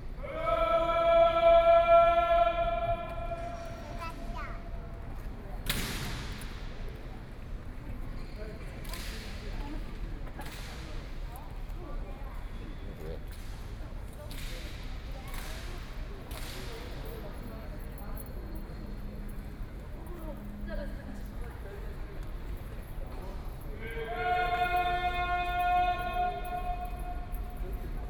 Chiang Kai-shek Memorial Hall, Taipei - Guard ceremony
Guard ceremony, Tourists, Sony PCM D50+ Soundman OKM II
台北市 (Taipei City), 中華民國, 26 May 2013